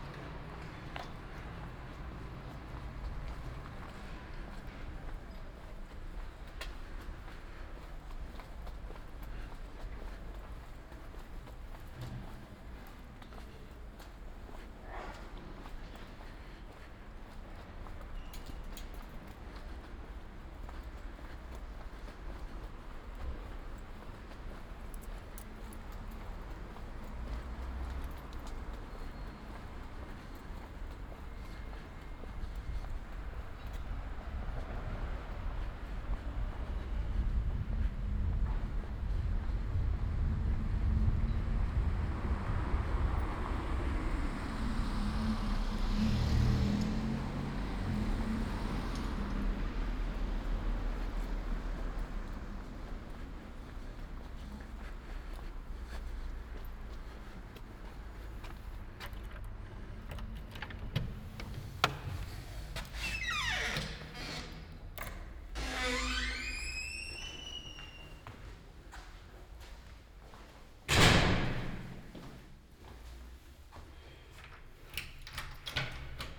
Ascolto il tuo cuore, città. I listen to your heart, city. Several chapters **SCROLL DOWN FOR ALL RECORDINGS** - Another morning far walk AR with break in the time of COVID19 Soundwalk
"Another morning far walk AR with break in the time of COVID19" Soundwalk
Chapter LXIX of Ascolto il tuo cuore, città. I listen to your heart, city
Thursday May 7th 2020. Walk to a borderline far destination: round trip. The two audio files are joined in a single file separated by a silence of 7 seconds.
first path: beginning at 7:40 a.m. end at 8:08 a.m., duration 28’14”
second path: beginning at 10:05 a.m. end al 10:41 a.m., duration 35’51”
Total duration of audio file: 01:04:13
As binaural recording is suggested headphones listening.
Both paths are associated with synchronized GPS track recorded in the (kmz, kml, gpx) files downloadable here:
first path:
second path:
7 May 2020, 07:40